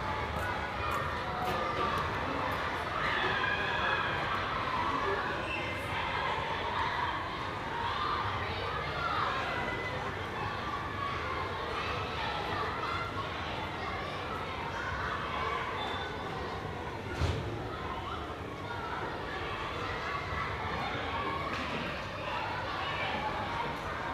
Children playing in schoolyard, Istanbul
sounds of many children playing in a schoolyard